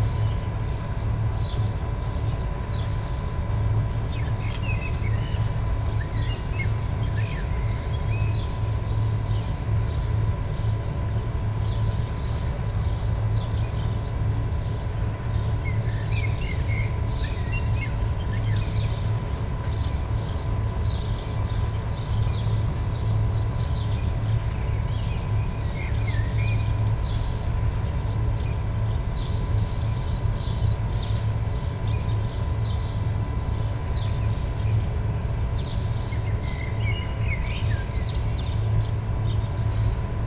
Klingenstr., Plagwitz, Leipzig, Germany - factury ventilation, hum
Ventilation drone from a building right next to the Plagwitz Wagenburg. Sound of a Siemens turbine factory, which, according to people of the laager, can be heard all over the area.
(Sony PCM D50, DPA4060)